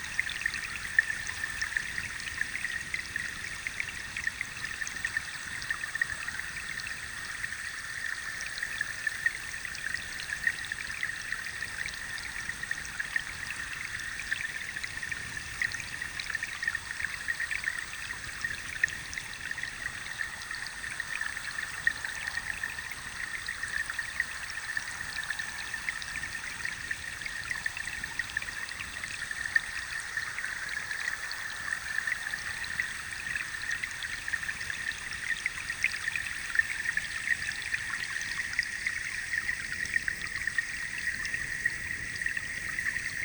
Hydrophone recording of the Rokytka river. The recording became a part of the sound installation "Stream" at the festival M3 - Art in Space in Prague, 2019